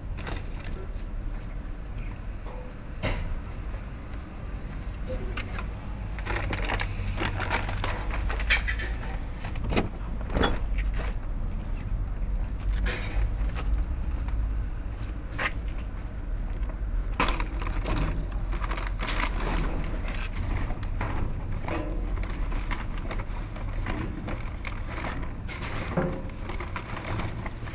Sollefteå, Sverige - Recycling paper and plastics
On the World Listening Day of 2012 - 18th july 2012. From a soundwalk in Sollefteå, Sweden. Recycling paper and plastics, a short discussion on recycling takes place around the containers. Recorded at the car parking place, recycling area of Coop Konsum shop in Sollefteå. WLD